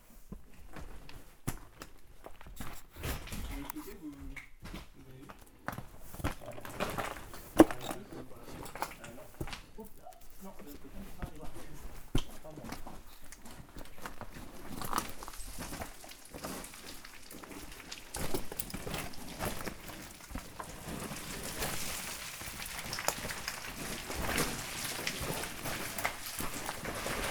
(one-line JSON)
{"title": "Saint-Martin Le Vinoux, France - Cement mine", "date": "2017-03-26 10:15:00", "description": "We are exploring an underground cement mine. Especially, we are trying to reach an upper level, using a dangerous chimney. Small cements rocks are falling from everywhere.", "latitude": "45.20", "longitude": "5.72", "altitude": "311", "timezone": "Europe/Paris"}